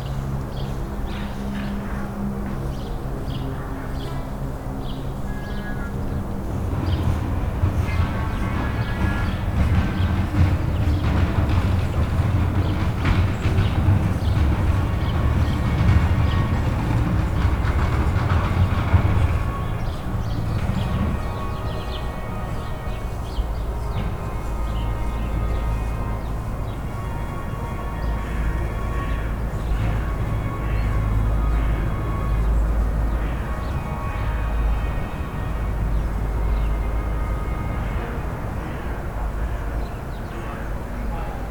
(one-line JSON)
{"title": "Weststraße, Schkeuditz, Deutschland - about occupation of public acoustical space", "date": "2018-02-12 15:25:00", "description": "Zeitiger Nachmittag nahe dem Stadtzentrum Schkeuditz. Vögel, Mülltonnen, Flugzeug, Autos, Straßenbahn, Menschen, Blutooth-Beschallung etc.\nAufgenommen während eines Soundwalks im Rahmen eines Workshops zur Einführung in die Klangökologie am 12.Februar 2018 mit Lea Skubella und Maxi Scheibner.\nZoom H4n + Røde NT5.", "latitude": "51.40", "longitude": "12.22", "altitude": "115", "timezone": "Europe/Berlin"}